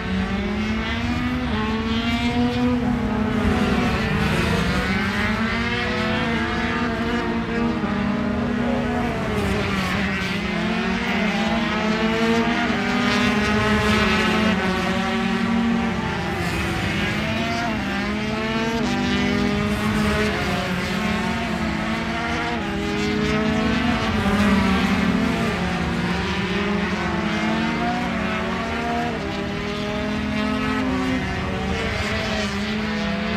Donington Park Circuit, Derby, United Kingdom - British Motorcycle Grand Prix 2004 ... 125 ...
British Motorcycle Grand Prix 2004 ... 125 free practice ... one point stereo mic to mini-disk ...
24 July 2004, 09:00